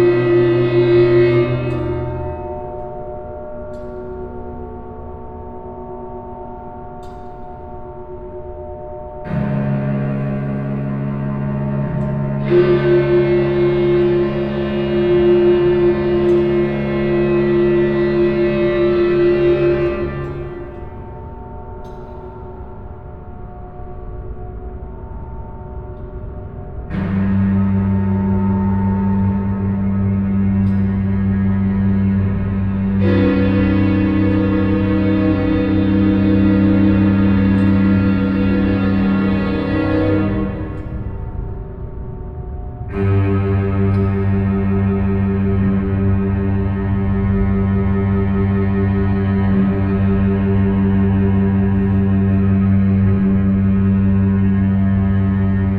Mannesmannufer, Düsseldorf, Deutschland - KIT, exhibition hall, installation sonic states
Inside the main part of the underearth KIT exhibition. 2013.
soundmap nrw - social ambiences, art spaces and topographic field recordings
Düsseldorf, Germany